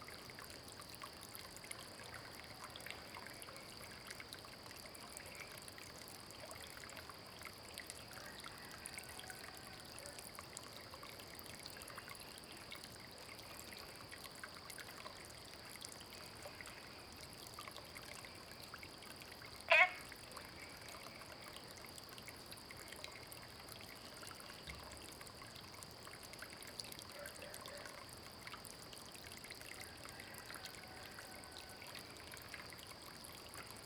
Green House Hostel, Puli Township - Early morning
Frog calls, Small ecological pool, Early morning, Chicken sounds
Zoom H2n MS+XY